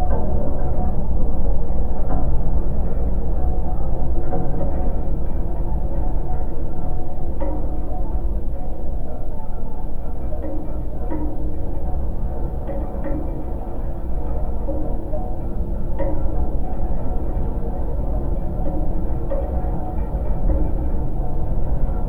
Vasaknos, Lithuania, fish feeder
windy day. geophone on monstrous metallic fish feeder